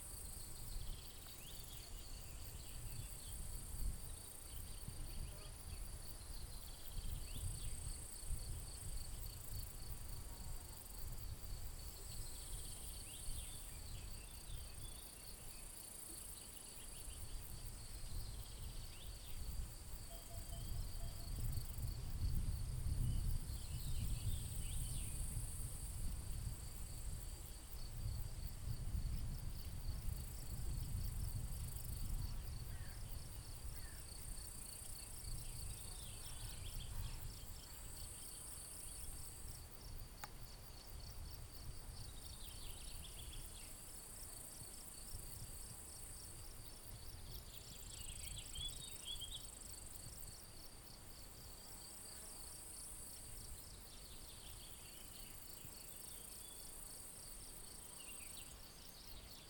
Buzen, Valchiusa TO, Italia - Trees
Trees - HerreraDos (experimental Folk - Fieldrecordings)